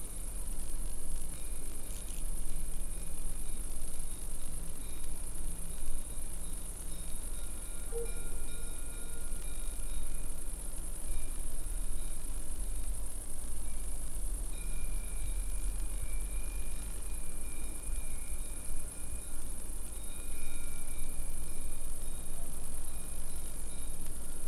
high-tension lines and cows - KODAMA document
Recording made by Hitoshi Kojo during KODAMA residency at La Pommerie
france, 12 September 2009, ~12:00